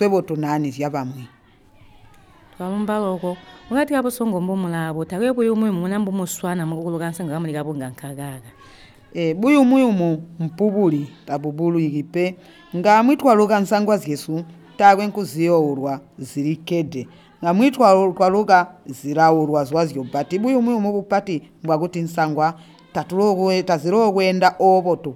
Sikalenge, Binga, Zimbabwe - We are basket weavers in Sikalenge...
Elina Muleya belongs to a group of basket weavers in Sikalenge Ward. Elina tells how the group was formed. She talks about the challenge of getting Ilala leaves for weaving. The palm tree doesn’t grow well in their area. The women have to walk far, in to the neighboring Ward, Simatelele, to find the leaves. Elina describes how the Ilala leaves are cut and prepared for the weaving and about some of the common patterns the women are weaving in to the baskets. It’s a knowledge that mainly the elder women are still having and cultivating. Achievements of the group include that the women are now owning live-stock, chickens and goats; their goal is to have a garden together at the Zambezi (Kariba Lake), grow tomato and vegetable and sell them. A challenge for the group of weavers is the small market in their area, even though they also sell a bit via the Binga Craft Centre.
20 July 2016